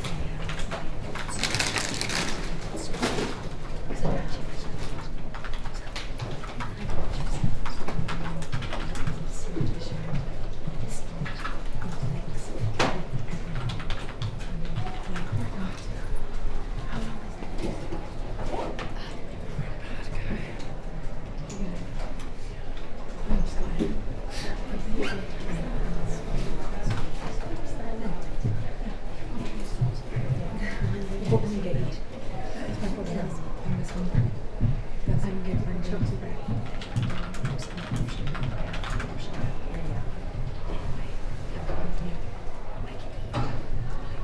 Oxford Brookes University, Gypsy Lane, Oxford - Brookes Library Study Space
Short 10-minute meditation in the study area of Brookes library. (Spaced pair of Sennheiser 8020s with SD MixPre6)